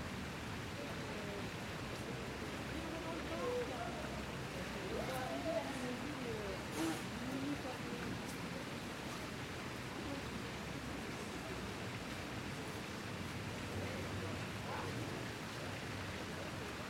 Pl. des Vosges, Paris, France - AMB PARIS EVENING PLACE DES VOSGES FOUNTAINS MS SCHOEPS MATRICED
This is a recording of the famous 'Place des Vosges' located in the 3th district in Paris. Microphones are pointed in the direction of the water fountains. I used Schoeps MS microphones (CMC5 - MK4 - MK8) and a Sound Devices Mixpre6.